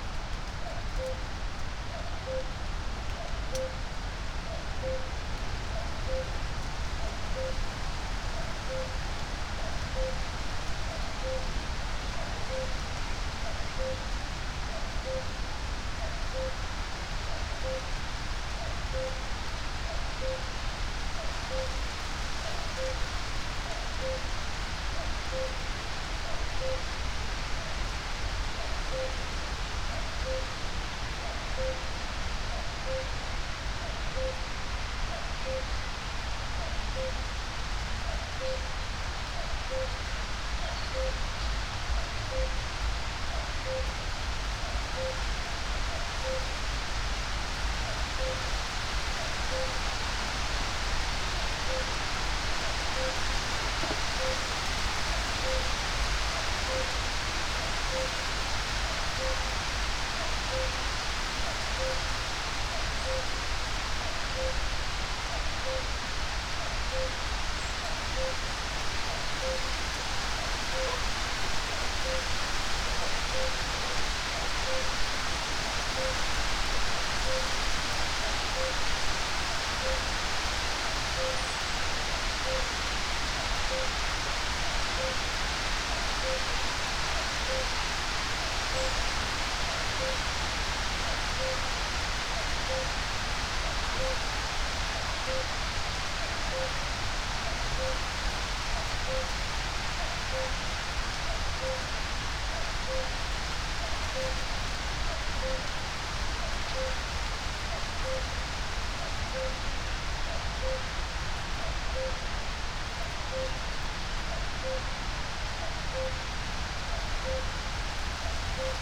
14:24 Berlin, Wuhletal - wetland / forest ambience
Deutschland, 12 June 2021, ~14:00